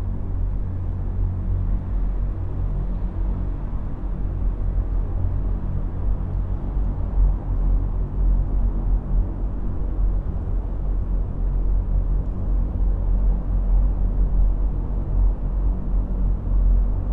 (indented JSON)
{
  "title": "Vila Franca de Xira, Portugal - tube resonance",
  "date": "2008-04-08 15:56:00",
  "description": "recorded with the microphone inside a ventilation tube while the train passes by. Recorder: M-Audio Microtrack + Canford Audio stereo preamp + hypercardioid AKG blue line mic.",
  "latitude": "38.95",
  "longitude": "-8.99",
  "timezone": "Europe/Lisbon"
}